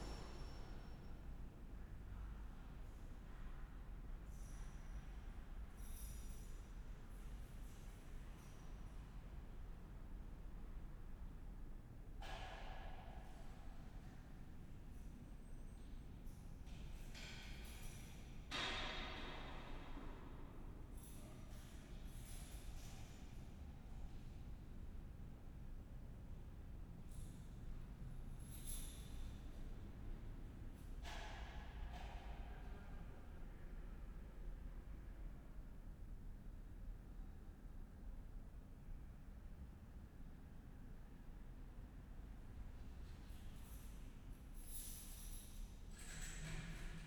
inside Sv.Marija church
(SD702 Audio Technica BP4025)